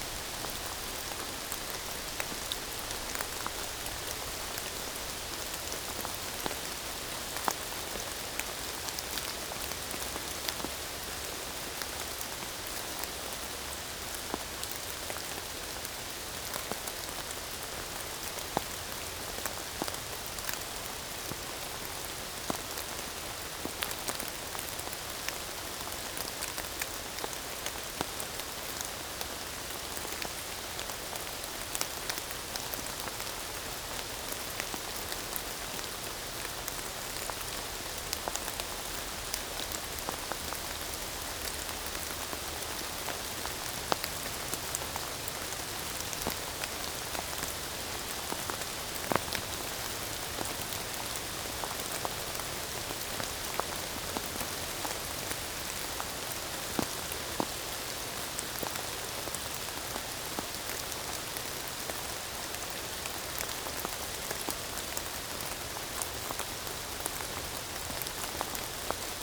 Barneville-sur-Seine, France - Rain
Rain is falling in the woods. It's a soft ambiance, even if this rain is quite boring for us.